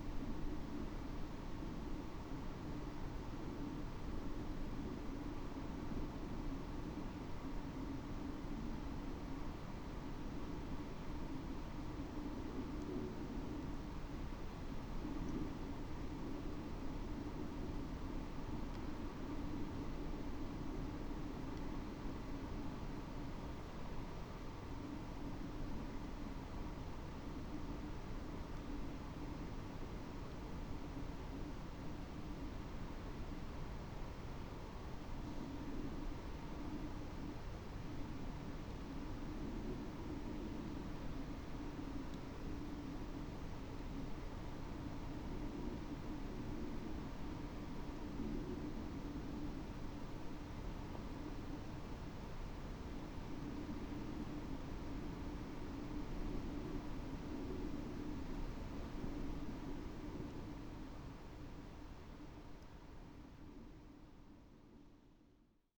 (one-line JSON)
{"title": "Puerto Yartou, Región de Magallanes y de la Antártica Chilena, Chile - storm log - puerto yartou breeze", "date": "2019-03-12 11:09:00", "description": "Light breeze at Poerto Yartou shore, wind SW 2 km/h.\nThe son of Swiss immigrants, Alberto Baeriswyl Pittet was founding in 1908 the first timber venture in this area: the Puerto Yartou factory.", "latitude": "-53.89", "longitude": "-70.14", "altitude": "7", "timezone": "America/Punta_Arenas"}